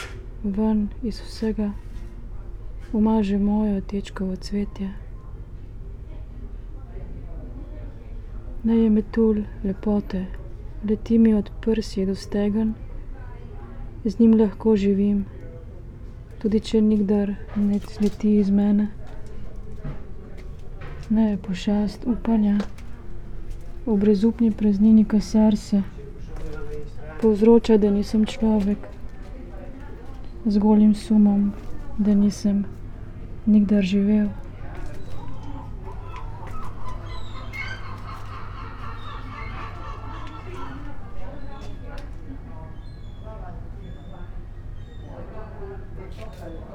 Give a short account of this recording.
reading poem Pošast ali Metulj? (Mostru o pavea?), Pier Paolo Pasolini